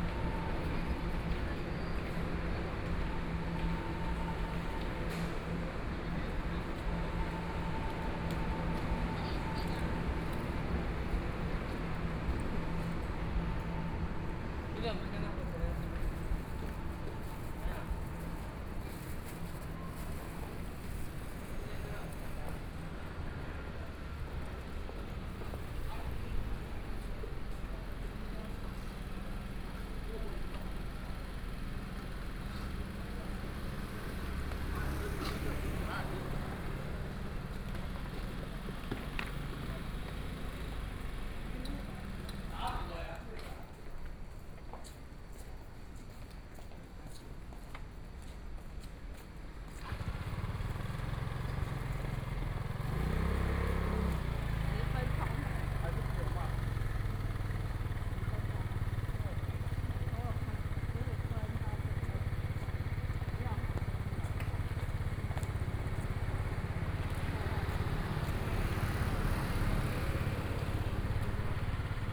Heping St., Zhubei Station - Zhubei Station
Station entrance, Zoom H4n+ Soundman OKM II
Hsinchu County, Taiwan, 24 September 2013